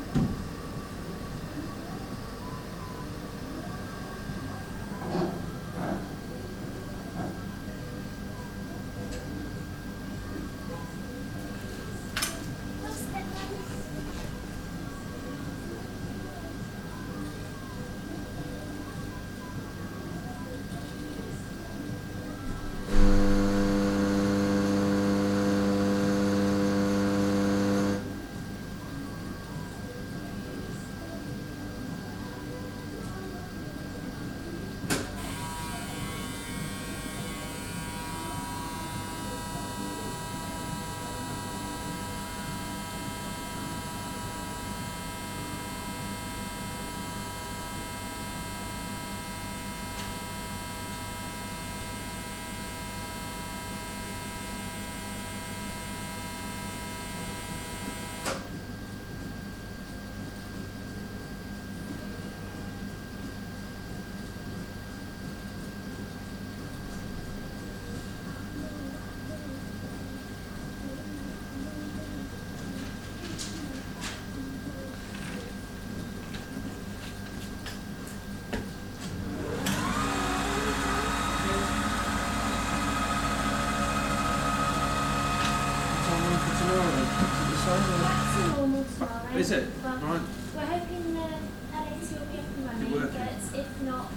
Radiotherapy session. Machinery and lasers.
South Yorkshire, UK, 14 May 2003